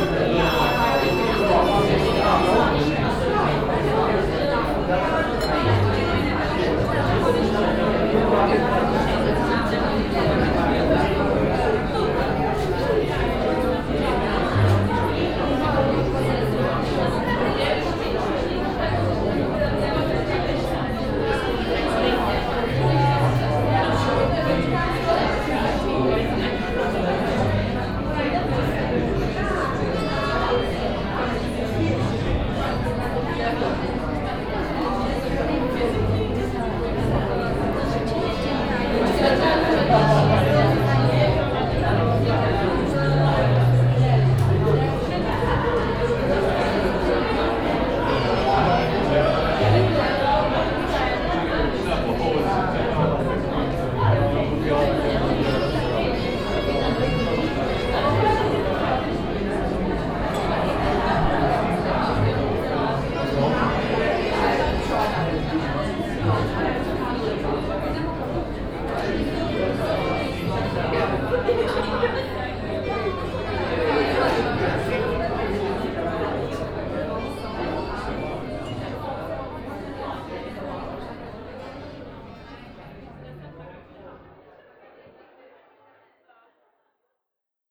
Old Town, Klausenburg, Rumänien - Cluj - Napoca - Restaurant Camino
Inside the crowded restaurant Camino on a saturday evening. The sound and atmosphere of the central cavern of the building.
soundmap Cluj- topographic field recordings and social ambiences
Cluj-Napoca, Romania